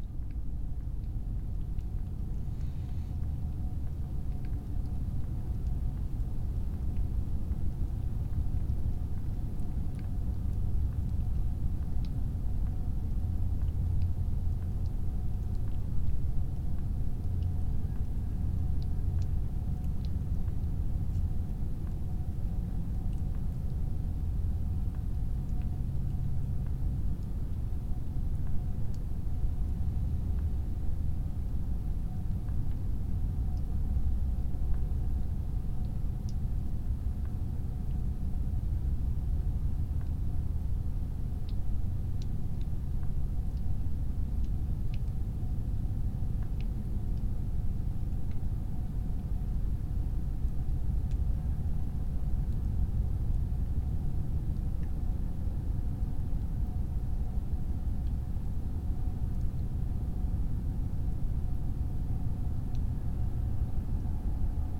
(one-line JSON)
{"title": "Pačkėnai, Lithuania, in electrical pole", "date": "2020-02-18 14:05:00", "description": "two concrete electrical poles lying on a meadow. they are pipe-like, so I have inserted microphones in one pole. to listen a hum of distant traffic...", "latitude": "55.44", "longitude": "25.58", "altitude": "125", "timezone": "Europe/Vilnius"}